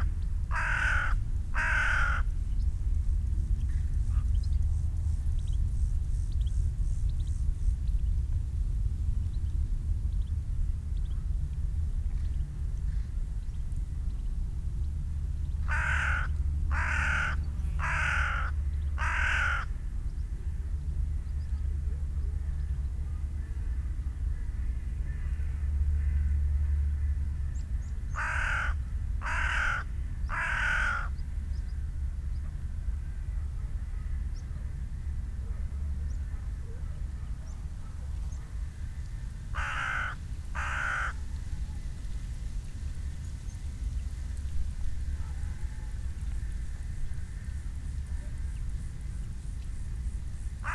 Großziethen, Schönefeld - field ambience
ambience on the open field between Berlin Gropiusstadt and Schönefeld airport. a permanent hum of aircrafts is in the air.
(Sony PCM D50, DPA4060)
28 September, 12:05